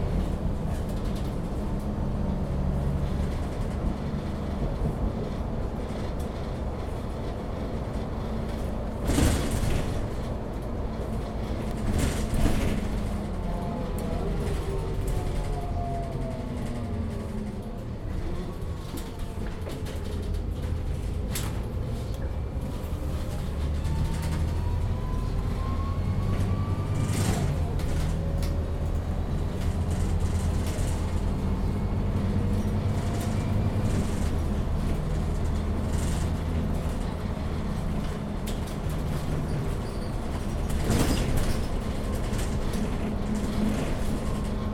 Hampton Rd, South Fremantle WA, Australia - Bus To Fremantle
Taking a bus to Fremantle. The bus model was a Mercedes-Benz O405NH. Recorded on a Zoom h2n, MS mode.